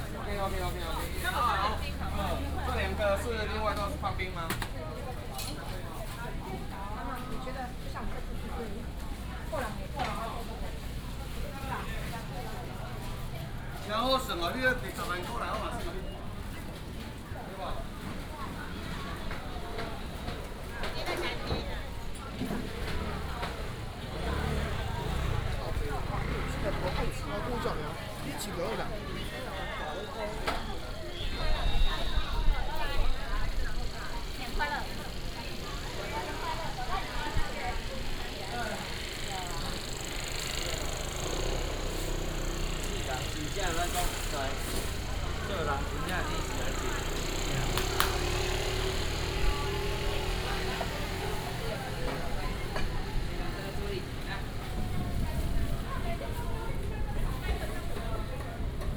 Datong Rd., Yuanli Township - Walking through the Street
Walking through the market, walking in the Street, A variety of vendors
Yuanli Township, Miaoli County, Taiwan